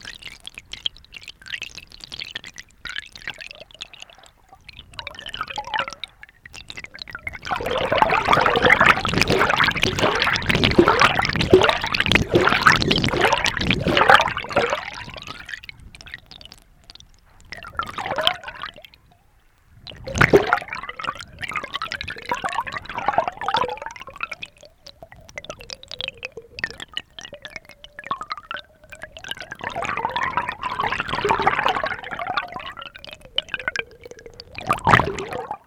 Ústí nad Labem-město, Czech Republic - bilina
tak tiekla bilina
Ústí nad Labem-Ústí nad Labem-město, Czech Republic, 6 March 2013